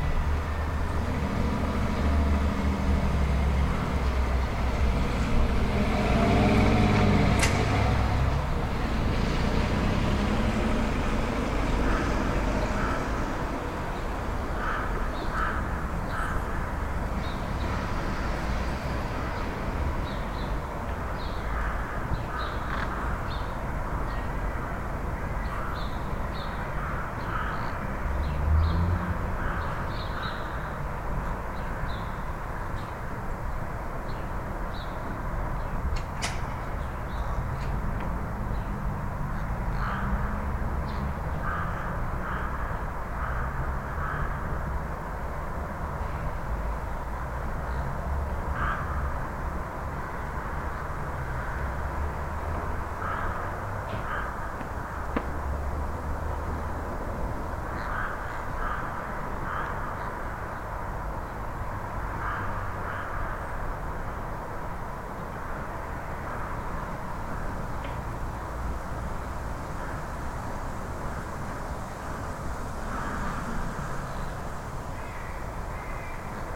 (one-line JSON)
{"title": "Innsbrucker Straße, Magdeburg - Cars, birds, leaves, background highway", "date": "2018-12-23", "description": "Dead end side street, Tascam-DR07. Normalization, very light compression, noise removal.", "latitude": "52.11", "longitude": "11.61", "altitude": "57", "timezone": "Europe/Berlin"}